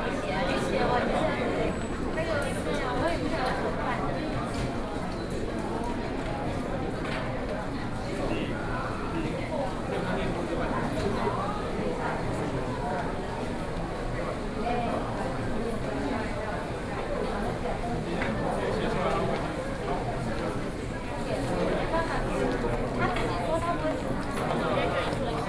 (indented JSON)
{
  "title": "National Taiwan University Hospital - Hospital",
  "date": "2012-10-09 16:06:00",
  "latitude": "25.04",
  "longitude": "121.52",
  "altitude": "33",
  "timezone": "Asia/Taipei"
}